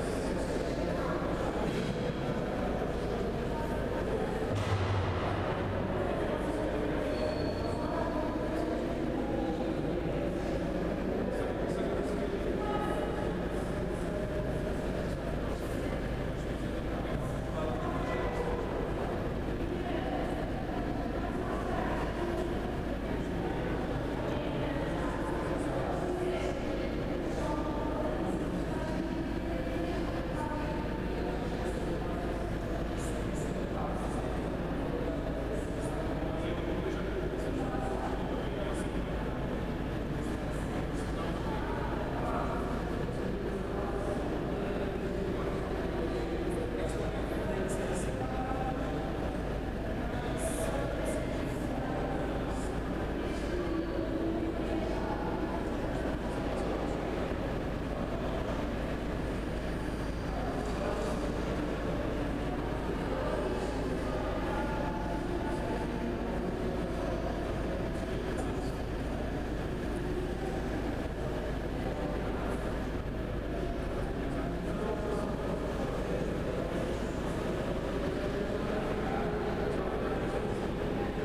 ESAD, Caldas da Rainha, Portugal - 1º place
inside the building on the first floor with balcony
stereophonic pickup
28 February 2014